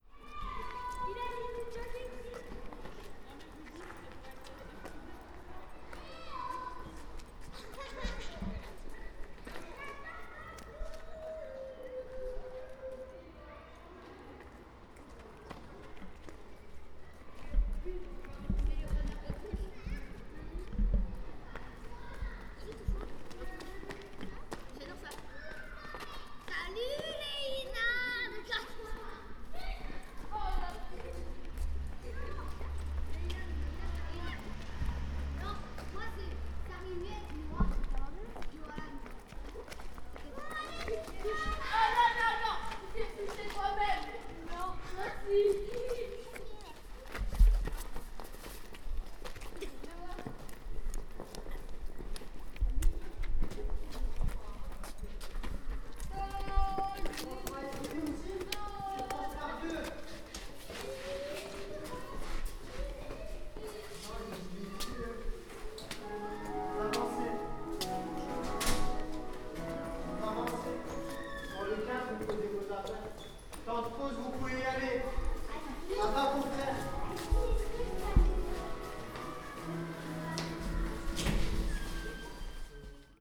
Schiltigheim, France - La cours de récréation - Part 2
Recorded by the children of Mermoz School in Strasbourg, using a parabolic reflector and Zoom H1 recorder, some children playing in the schoolyard afterschool.
28 January 2016, ~17:00